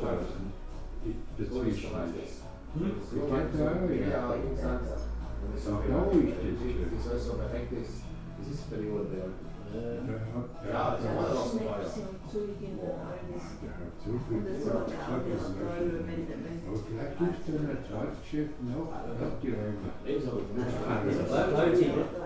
Innsbruck, Österreich - zum toni
zum toni, innsbruck